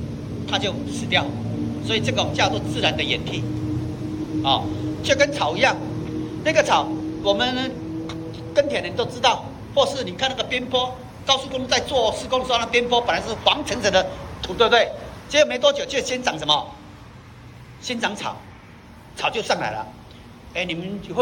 300台灣新竹市香山區長興街262巷36號 - 紅樹林解說
新竹驚奇海岸(張登凱老師解說香山溼地)